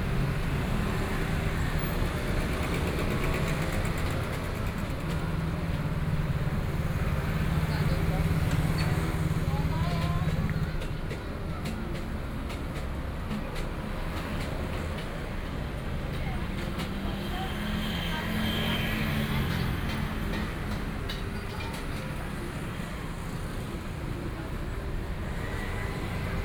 Zhonghua St., Luzhou Dist., New Taipei City - Intersection
Traffic Noise, Traditional temple festivals team, Roadside vendors selling chicken dishes, Binaural recordings, Sony PCM D50 + Soundman OKM II